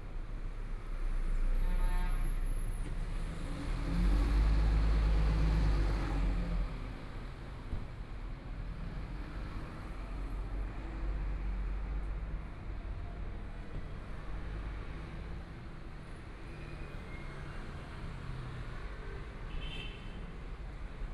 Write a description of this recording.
Start small roadway, Then came the main road, Walking across the different streets, Traffic Sound, Motorcycle sound, Binaural recordings, ( Proposal to turn up the volume ), Zoom H4n+ Soundman OKM II